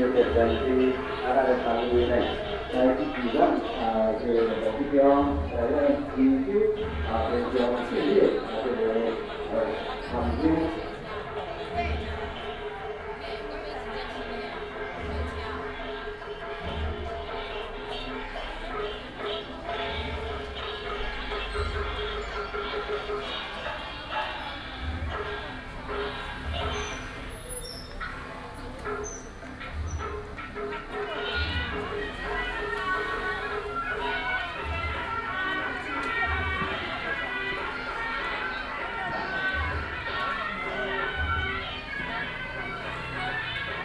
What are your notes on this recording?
At the temple, Traffic sound, Binaural recordings, Sony PCM D100+ Soundman OKM II